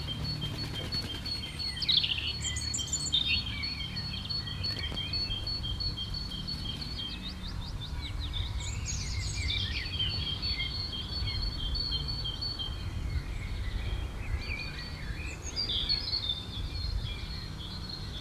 texel, duinen, in the forest
morning time in a small forest - dense atmosphere with high wind whispers and several bird sounds including seagulls
soundmap international: social ambiences/ listen to the people in & outdoor topographic field recordings